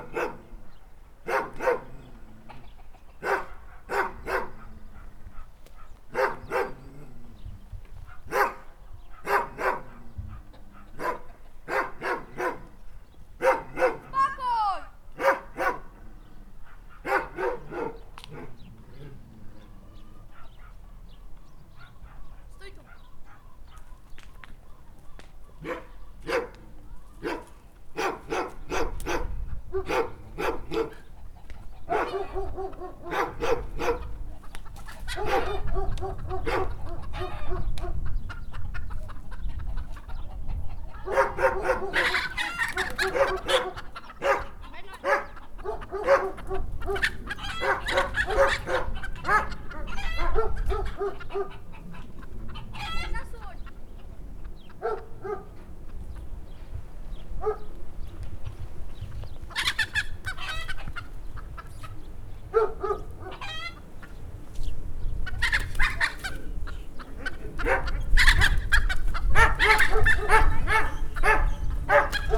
Psarskie village near Srem, railroad tracks - kids chasing hens
as soon as we showed up with the recorders, two kids ran out of the house, chasing their hens for fear we would steal or hurt the animals. the zoom recorders look quite scary, a bit like electroshock guns. both kids and the dog got really nervous.
Śrem, Poland, 8 April 2012, ~15:00